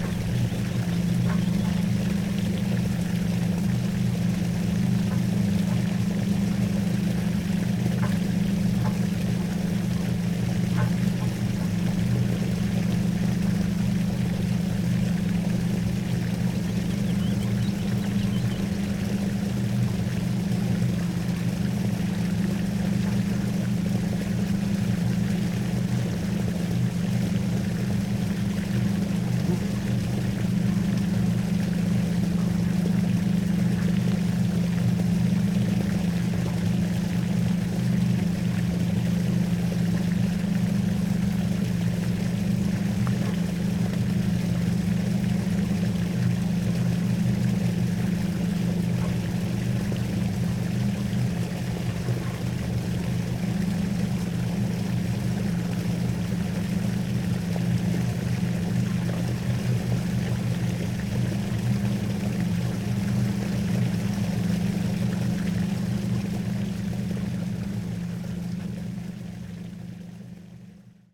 pumped mine water drains emptying into the basin
Ida-Virumaa, Estonia, 5 July